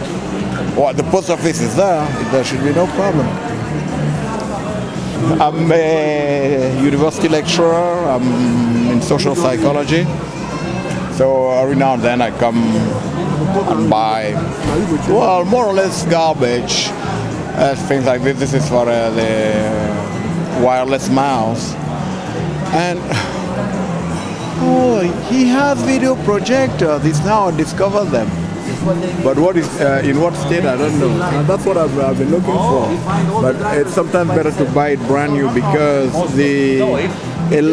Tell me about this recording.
A man from Africa is looking for a video projector at the "Valtteri" flea market in Vallila, Helsinki.